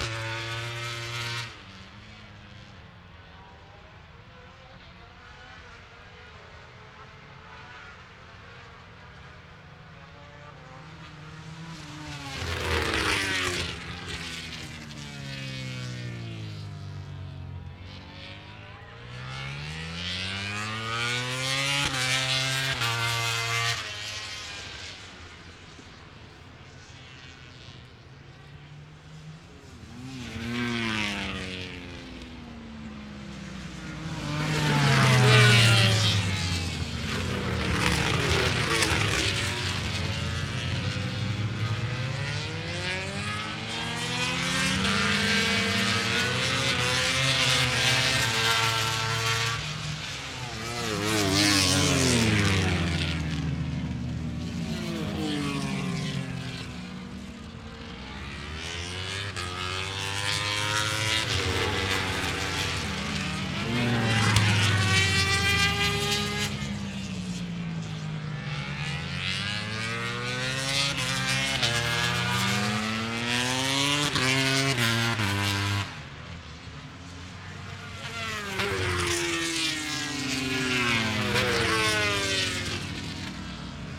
{"title": "Donington Park Circuit, Derby, United Kingdom - British Motorcycle Grand Prix 2005 ... moto grandprix ...", "date": "2005-08-22 10:20:00", "description": "British Motorcycle Grand Prix 200 ... free practice one ... part two ... one point stereo mic to minidisk ... the era of the 990cc bikes ...", "latitude": "52.83", "longitude": "-1.38", "altitude": "94", "timezone": "GMT+1"}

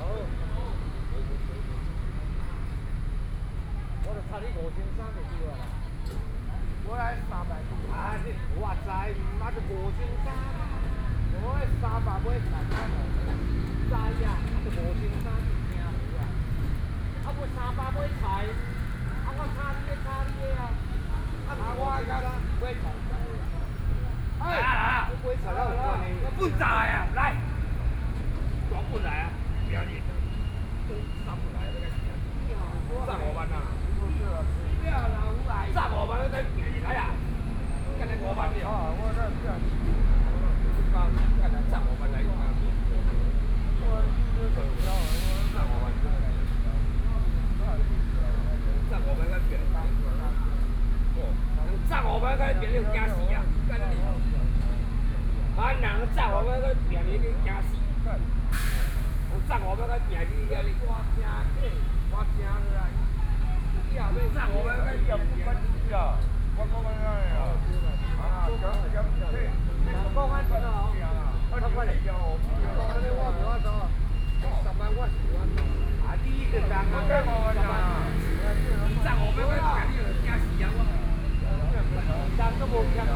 {"title": "Wenhua Park, Beitou, Taipei City - Drunkard", "date": "2013-09-16 18:52:00", "description": "A group of alcoholics is a dispute quarrel, Traffic Noise, Zoom H4n+ Soundman OKM II", "latitude": "25.14", "longitude": "121.50", "altitude": "19", "timezone": "Asia/Taipei"}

